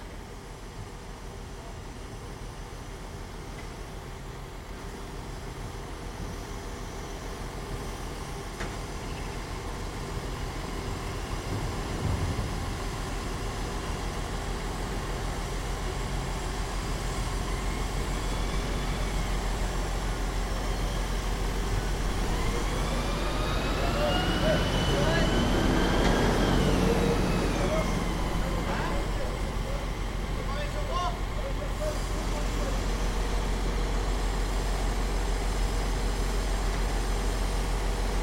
Saint-Nazaire, France - Manoeuvre délicate...
Deux ouvriers chargent un bloc de béton moulé dans une benne de camion. L'un est aux au joystick mais ne voit pas le bloc : l'autre est ses yeux. Un troisième, visiblement le chef, une oreille au téléphone, vérifie d'un oeil le déroulement de l'opération.
22 September 2015